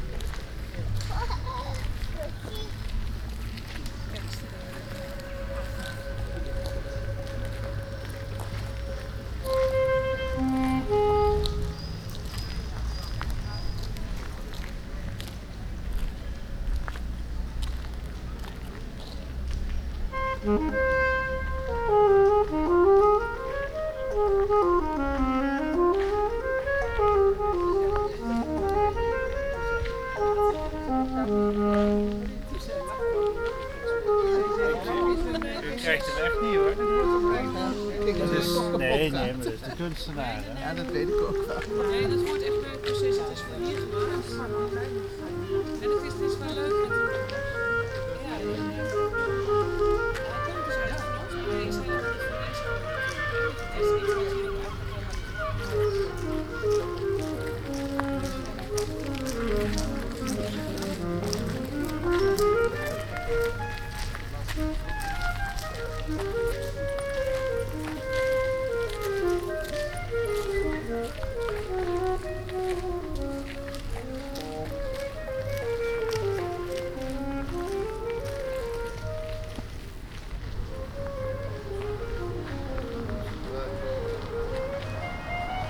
The Hague Sculpture 2012 Lange Voorhout. Part of the 'Rainbow Nation' exhibition.
Some technical glitch forced me to do an unfortunate edit somewhere in the middle. But I like the atmosphere so much that I submit it anyway.